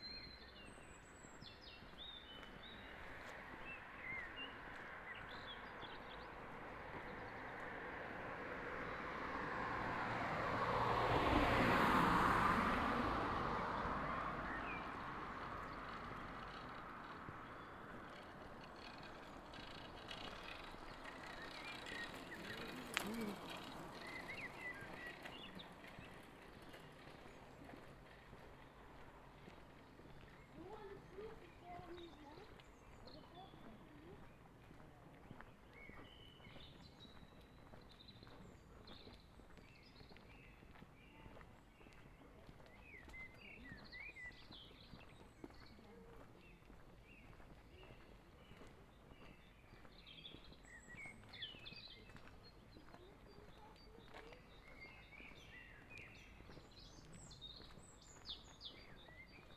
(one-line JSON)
{"title": "Kopperpahler Allee, Kronshagen, Deutschland - Binaural evening walk", "date": "2021-05-23 19:24:00", "description": "Evening walk, mostly quiet neighborhood, some traffic noise on the street, a train passing by, lots of birds, some other pedestrians and bicycles, unavoidable steps and breathing. Sony PCM-A10 recorder, Soundman OKM II Klassik mics with furry earmuffs as wind protection.", "latitude": "54.34", "longitude": "10.09", "altitude": "19", "timezone": "Europe/Berlin"}